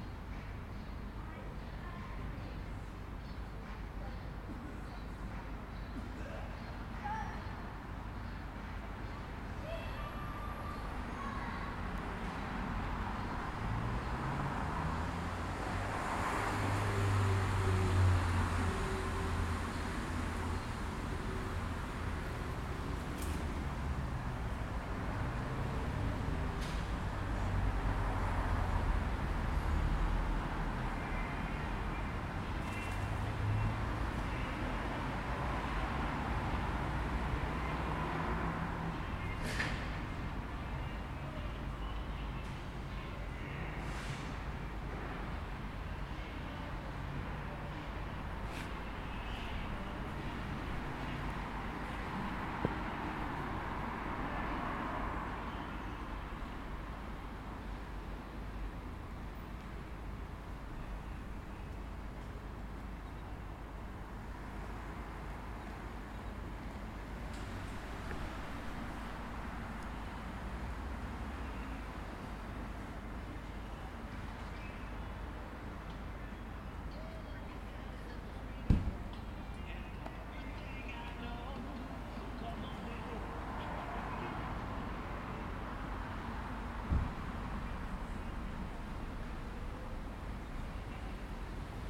Unit 1410/149 Cope St, Waterloo NSW, Australia - Reggae
Recorded with Zoom pro mic, residents walking alone Cope St
New South Wales, Australia, 10 July 2020, 20:30